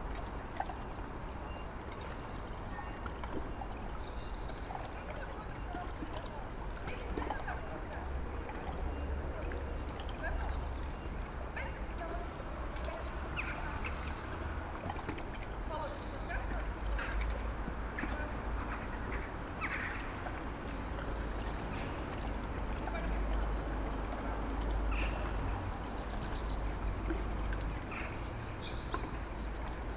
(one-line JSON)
{"title": "Kaliningrad, Russia, echoes from the ship", "date": "2019-06-08 19:50:00", "description": "short echoes between the ship and building", "latitude": "54.71", "longitude": "20.50", "altitude": "4", "timezone": "Europe/Kaliningrad"}